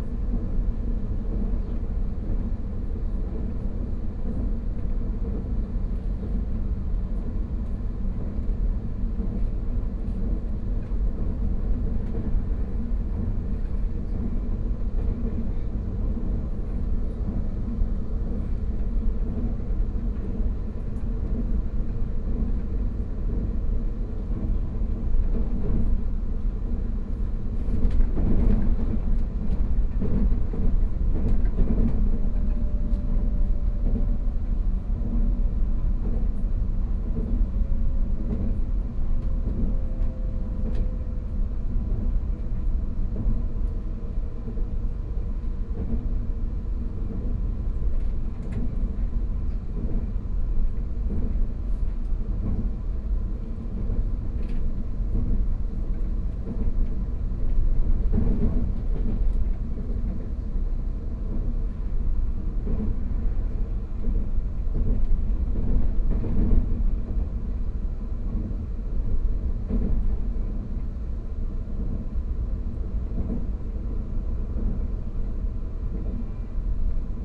{"title": "Yilan Line, Yilan County - Puyuma Express", "date": "2014-07-05 08:28:00", "description": "Puyuma Express, to Yilan Railway Station\nSony PCM D50+ Soundman OKM II", "latitude": "24.78", "longitude": "121.76", "altitude": "8", "timezone": "Asia/Taipei"}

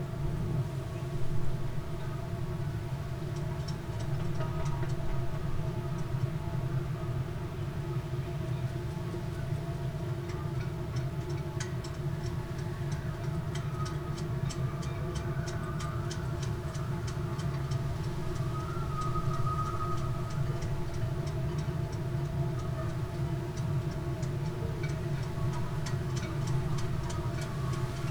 {"title": "workum: marina - the city, the country & me: marina, mic in a metal box trolley", "date": "2013-06-28 00:53:00", "description": "mic in a metal box trolley, reeds swayed by the wind\nthe city, the country & me: june 28, 2013", "latitude": "52.97", "longitude": "5.42", "timezone": "Europe/Amsterdam"}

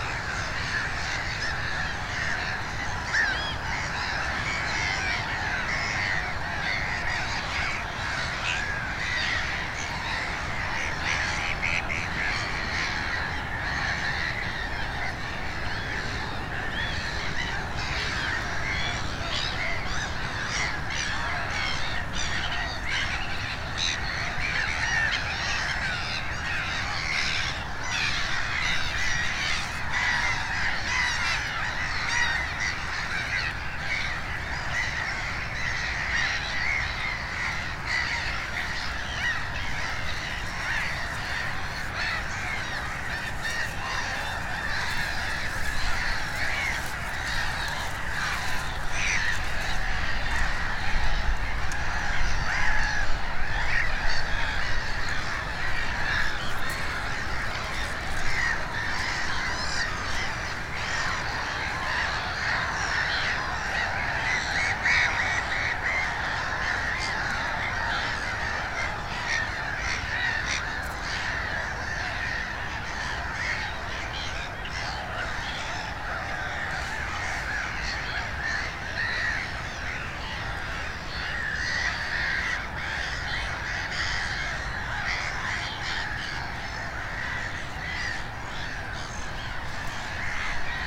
{"title": "Utena, Lithuania, gulls colony", "date": "2022-03-28 17:00:00", "description": "The local dam was lowered for repair works. New island appeared from the waters and is occupied by water birds. New soundscape in the known place.", "latitude": "55.52", "longitude": "25.63", "altitude": "121", "timezone": "Europe/Vilnius"}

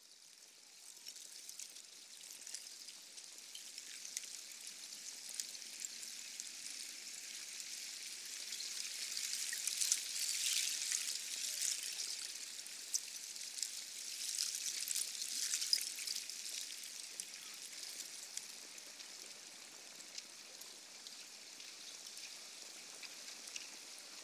{"title": "Samcheon-dong, Chuncheon-si, Gangwon-do, South Korea - at the edge of the frozen river", "date": "2014-01-01 12:00:00", "description": "the ice across the frozen river is agitated by surface wave action", "latitude": "37.87", "longitude": "127.71", "altitude": "73", "timezone": "Asia/Seoul"}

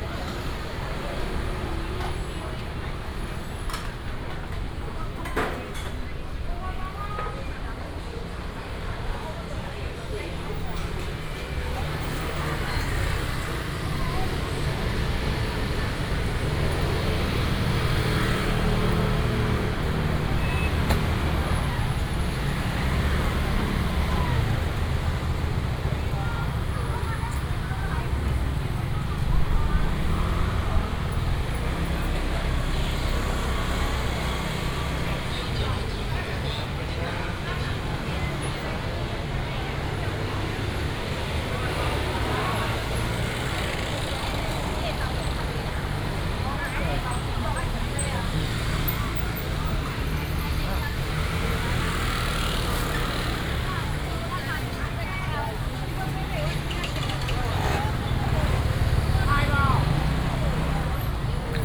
{"title": "Wenchang St., East Dist., Chiayi City - walking in the Street", "date": "2017-04-18 09:57:00", "description": "Walk through the traditional market, Traffic sound", "latitude": "23.48", "longitude": "120.46", "altitude": "44", "timezone": "Asia/Taipei"}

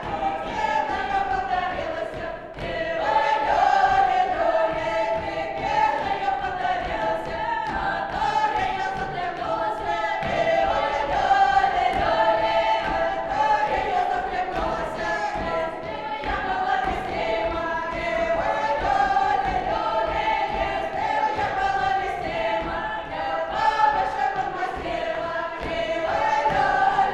{"title": "Moscow conservatoire, Rakhmaninov Hall - Folklore season-ticket concert(rehearsal)", "date": "2010-01-16 18:15:00", "latitude": "55.76", "longitude": "37.61", "altitude": "147", "timezone": "Europe/Moscow"}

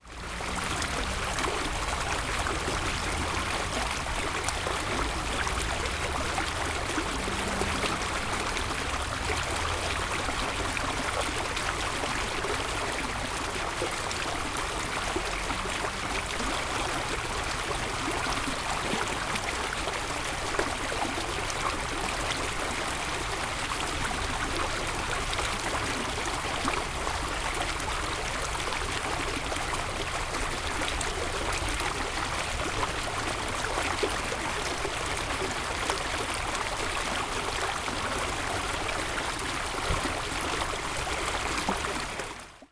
{"title": "Ilam, Christchurch, New Zealand - Campus stream", "date": "2013-05-02 18:10:00", "description": "Small stream in University of Canterbury's Ilam Campus. Stream sound plus some carpark and street background sounds. Recorded with Zoom H4n.", "latitude": "-43.52", "longitude": "172.58", "altitude": "21", "timezone": "Pacific/Auckland"}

